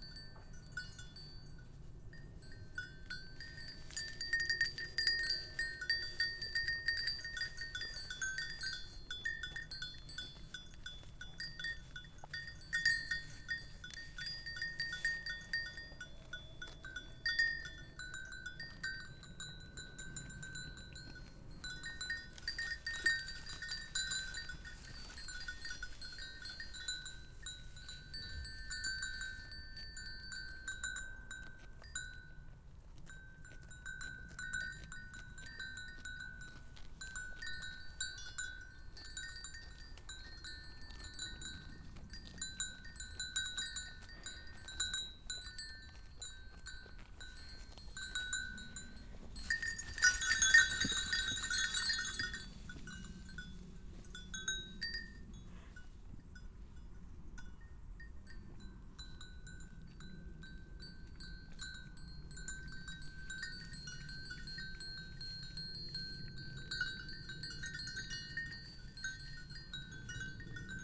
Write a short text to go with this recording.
a herd of curious goats is approaching the recordist, who himself became curious about ringing bells from afar, (Sony PCM D50, Primo EM172)